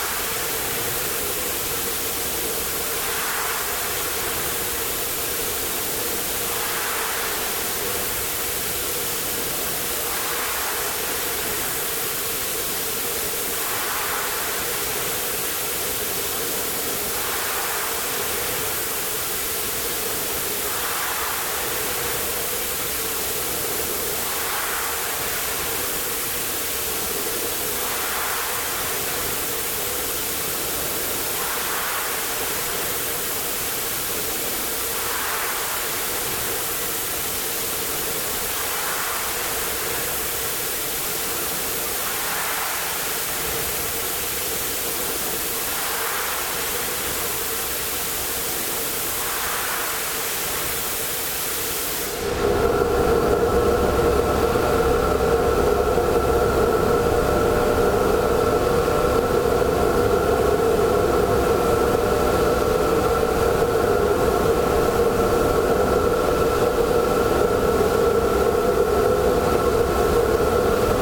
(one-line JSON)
{"title": "Seraing, Belgique - Enormous pumps", "date": "2009-08-01 10:15:00", "description": "This is an archive recording of the several enormous pumps, which were turning when this factory was active. It was pumping water in the \"Meuse\", in aim to give water to this enormous blast furnace.", "latitude": "50.61", "longitude": "5.55", "altitude": "77", "timezone": "Europe/Brussels"}